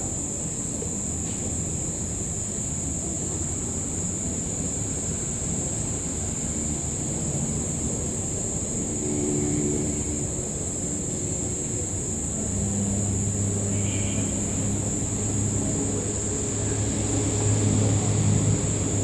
Santa Isabel, Carepa, Antioquia, Colombia - Tilapias restaurant
Night wild sounds in a restaurant outside Carepa
Zoom H2n XY
12 December 2014, ~22:00